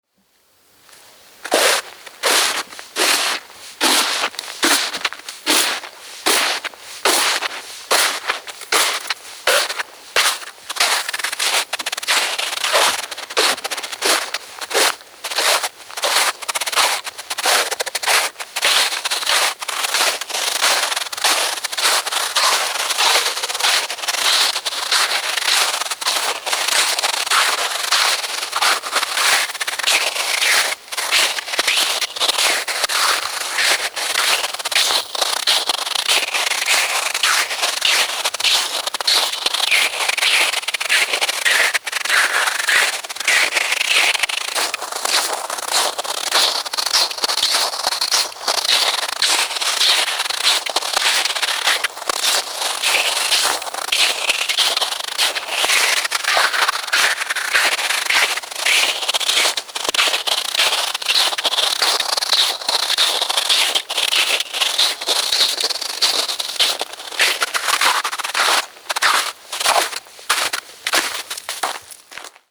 neoscenes: ice walk, Suomenlinna
a warming early spring sunshine, finally looking a bit red-shifted (still much of the winter blue-shift left, however), night air still very cold, far below zero -- footsteps leave this sonic trace.
Helsinki, Finland, 16 April, 14:44